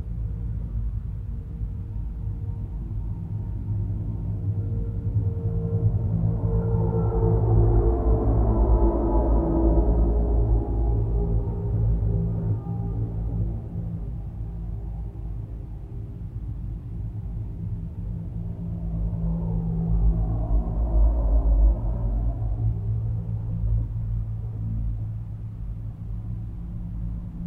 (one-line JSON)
{"title": "Cartago, CA, USA - Highway 395 Ambient Traffic through Cattle Guard", "date": "2014-08-15 11:00:00", "description": "Metabolic Studio Sonic Division Archives:\nAmbient Highway 395 traffic sounds as heard through cattle guard grate next to roadway. Recorded on H4N with shure VP64 microphone inside cattle guard piping structure", "latitude": "36.38", "longitude": "-118.02", "altitude": "1129", "timezone": "America/Los_Angeles"}